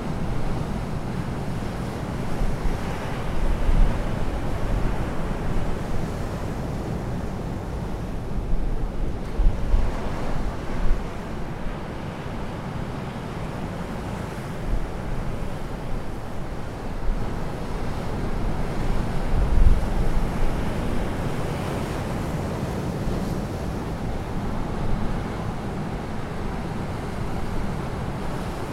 Chesil Beach 2pm 11-05-14